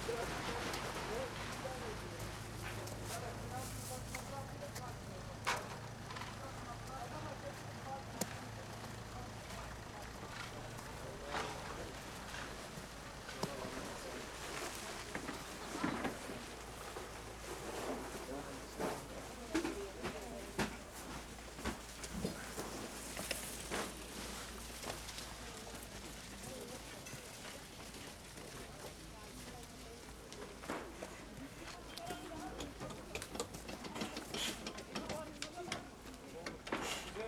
berlin, maybachufer: wochenmarkt - the city, the country & me: market day
a walk around the market, cold and snowy winter evening, market is finished, marketeers dismantle their market stalls
the city, the country & me: december 17, 2010